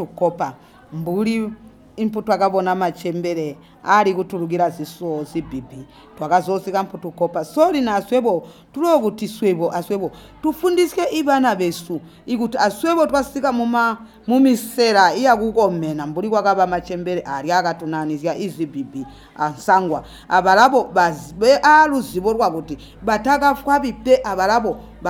Sikalenge, Binga, Zimbabwe - We are basket weavers in Sikalenge...
Elina Muleya belongs to a group of basket weavers in Sikalenge Ward. Elina tells how the group was formed. She talks about the challenge of getting Ilala leaves for weaving. The palm tree doesn’t grow well in their area. The women have to walk far, in to the neighboring Ward, Simatelele, to find the leaves. Elina describes how the Ilala leaves are cut and prepared for the weaving and about some of the common patterns the women are weaving in to the baskets. It’s a knowledge that mainly the elder women are still having and cultivating. Achievements of the group include that the women are now owning live-stock, chickens and goats; their goal is to have a garden together at the Zambezi (Kariba Lake), grow tomato and vegetable and sell them. A challenge for the group of weavers is the small market in their area, even though they also sell a bit via the Binga Craft Centre.